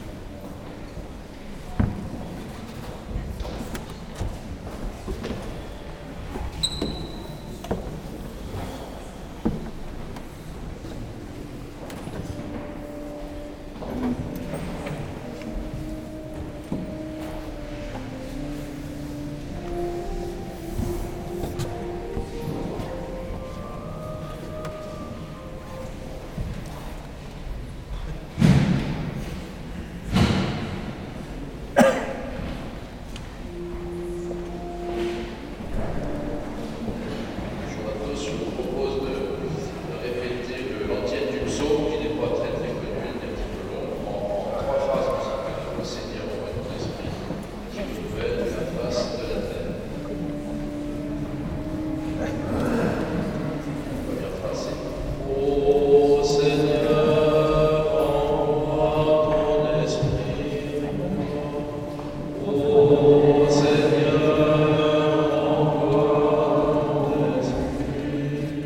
Recording of the beginning of the traditional mass in the St-Martin de Ré church. Good luck to everyone who want to listen to this !